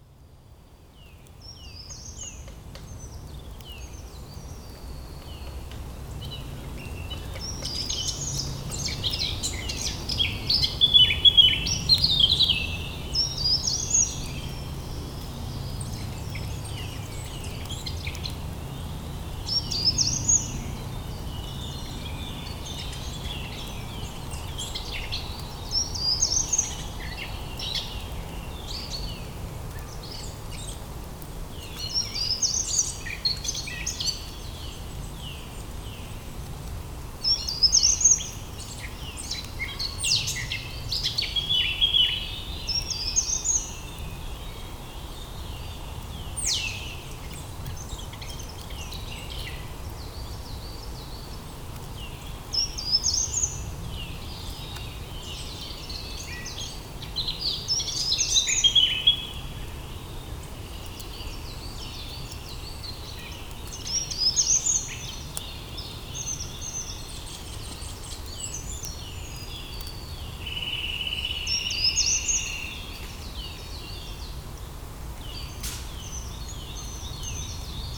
Court-St.-Étienne, Belgique - In the forest
Spring time ambiance in a timberland, distant sound of forest birds as Common Chaffinch and Common Chiffchaff.
Court-St.-Étienne, Belgium, 16 April 2018